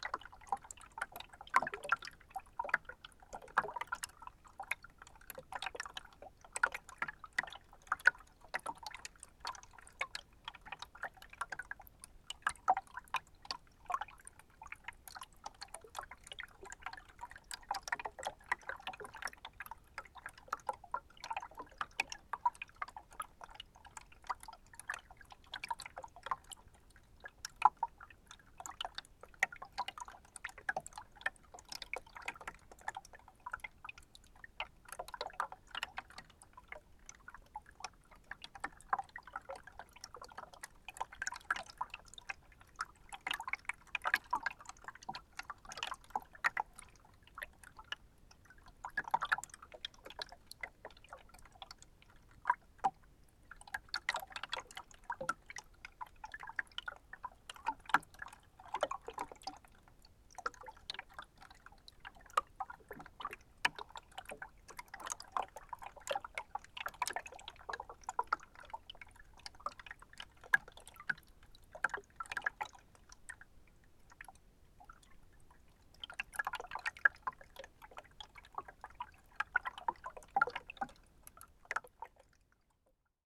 Lithuania, Utena, stream in rift
small iced river, but theres some opening/rift which strangely reverberate stream sound
26 February 2011